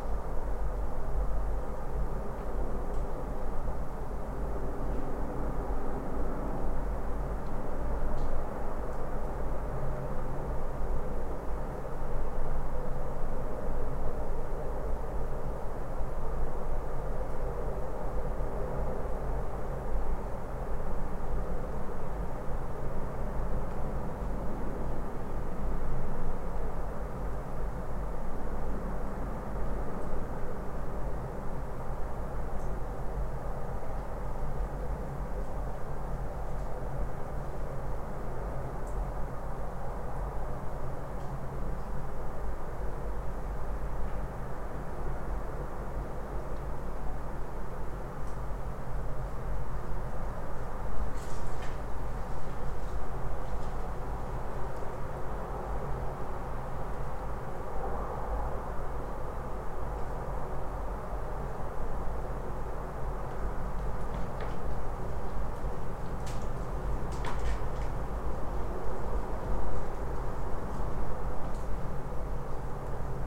Utena, Lithuania, abandoned building
abandoned soviet military base, buildings with no windows...listening to the distant hum of a city